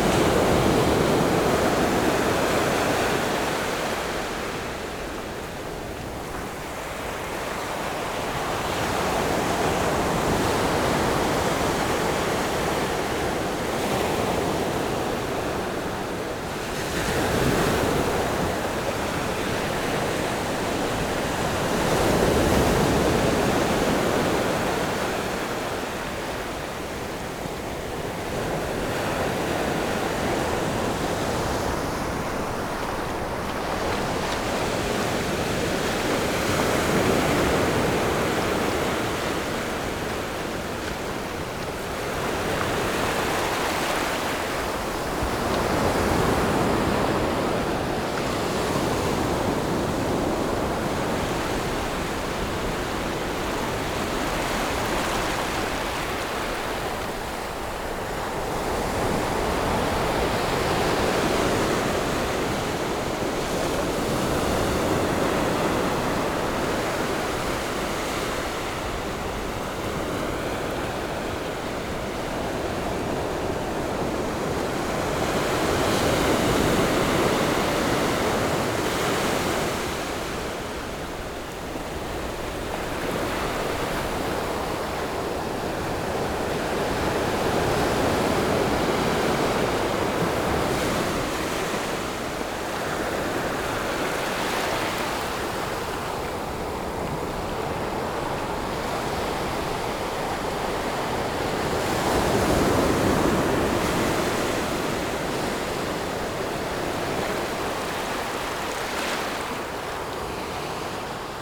In the beach, Sound of the waves
Zoom H6 MS+ Rode NT4
Zhuangwei Township, Yilan County, Taiwan, 26 July 2014